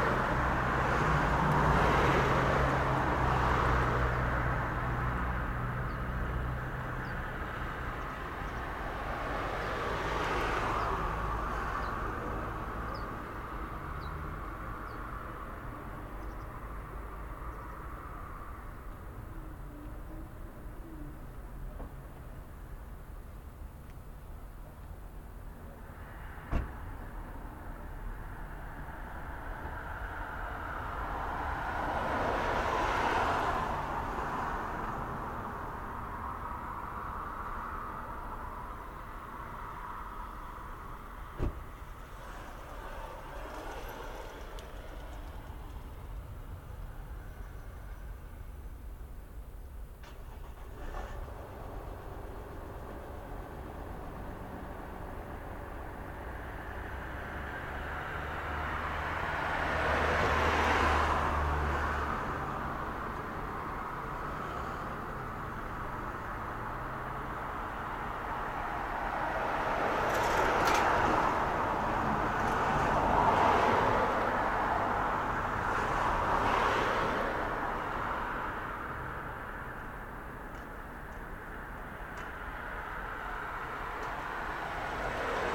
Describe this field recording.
redorded with Tascam DR-07 in the bike basket (metal-grid) of bike on the sidewalk, between car and wall from MAN-Corp.; lot of traffic in this industrial area.